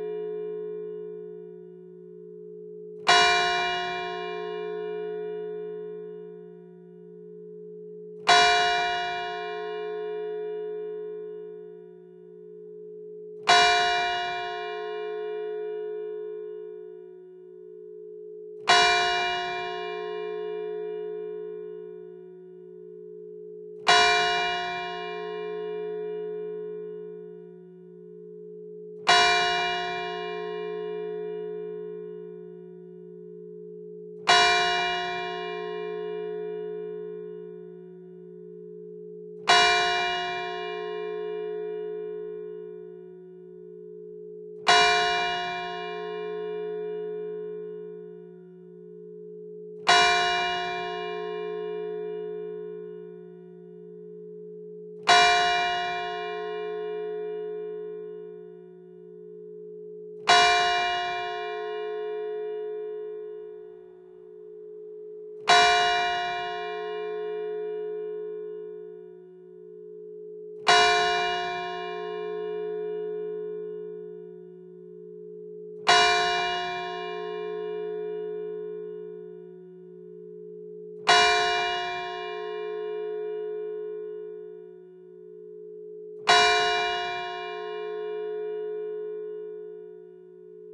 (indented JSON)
{
  "title": "Rte de l'Église Saint-Martin, Montabard, France - Montabard - Église St-Martin",
  "date": "2020-09-22 10:00:00",
  "description": "Montabard (Orne)\nÉglise St-Martin\nLe Glas",
  "latitude": "48.81",
  "longitude": "-0.08",
  "altitude": "238",
  "timezone": "Europe/Paris"
}